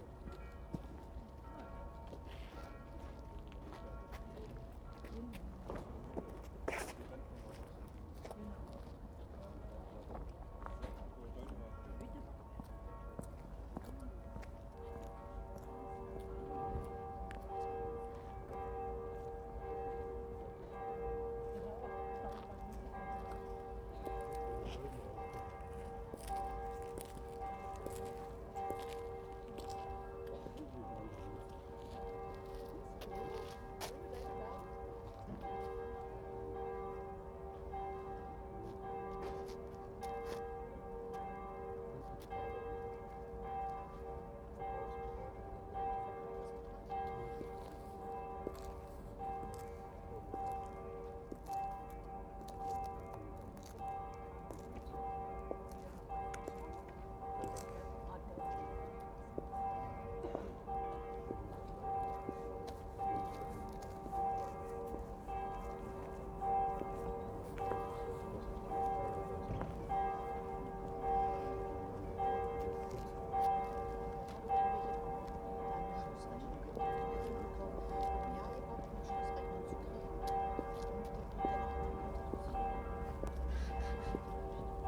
6.00pm bells and station atmosphere while waiting for a train. Cold January.
Brandenburg, Deutschland, 25 January 2012